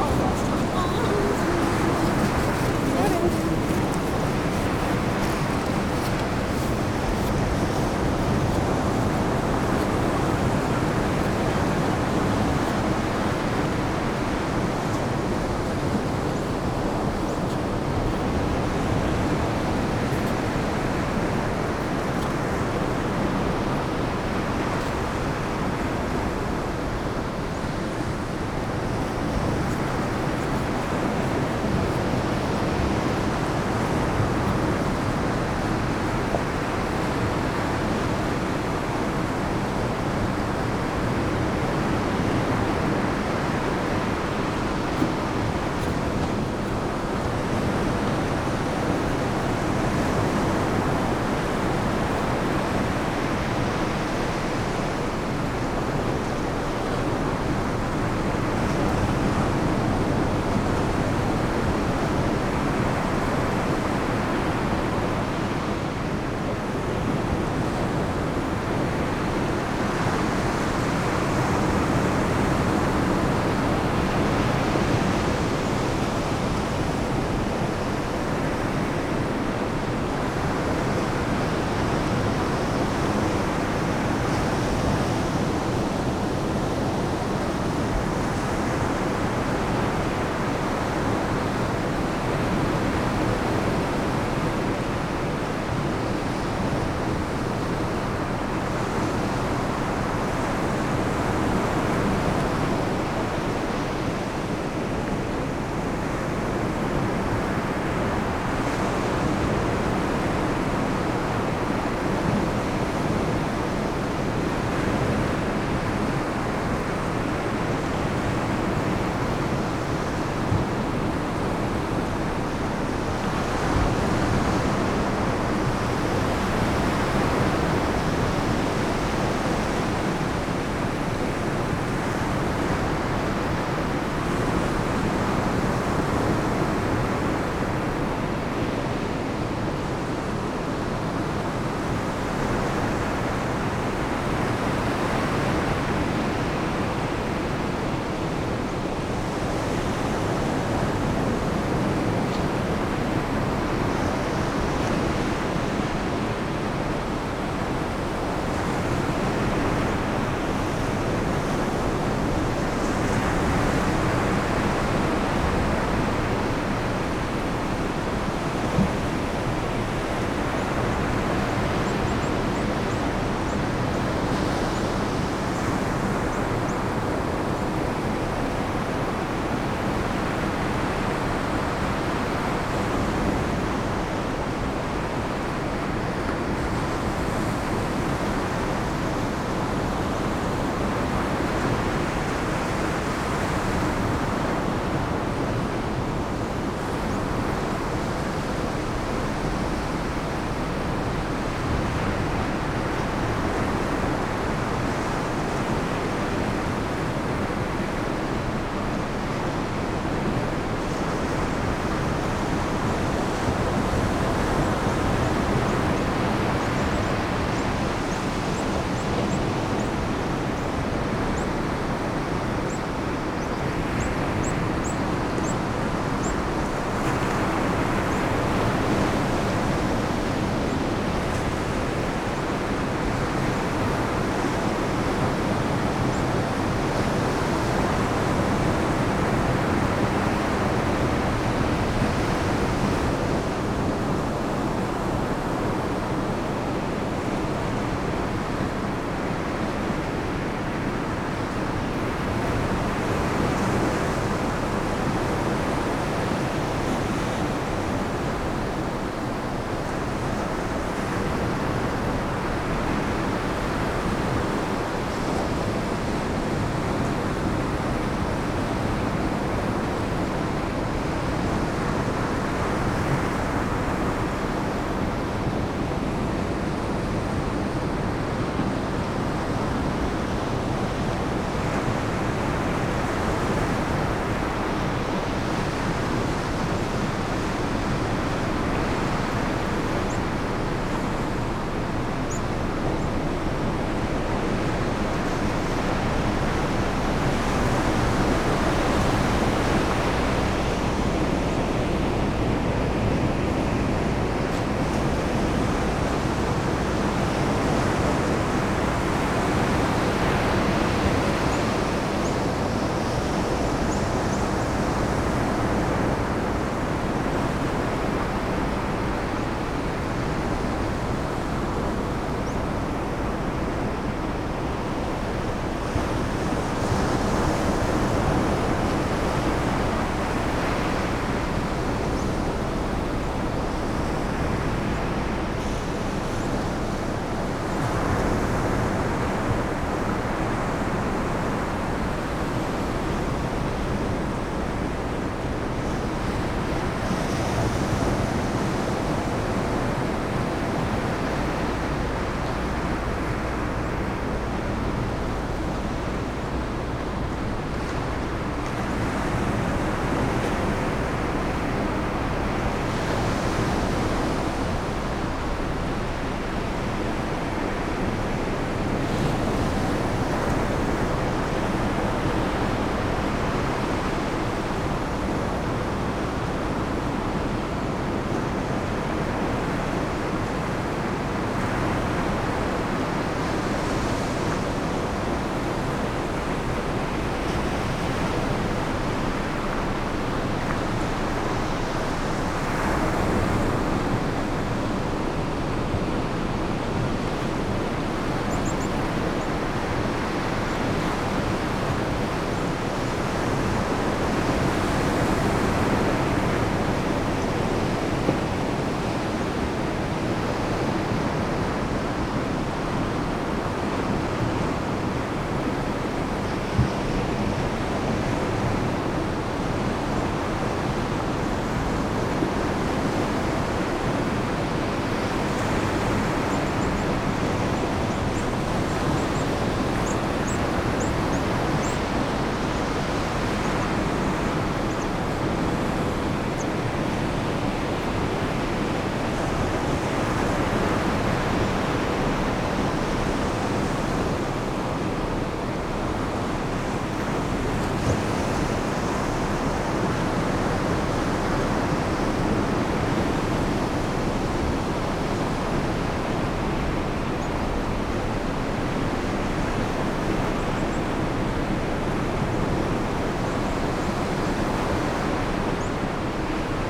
Henrietta St, Whitby, UK - foreground ... rock pipits ... background ... receding tide ...
foreground ... rock pipits ... background ... receding tide ... lavalier mics clipped to sandwich box ... turned towards boulders and low cliff ... distant zeeps from rock pipits ...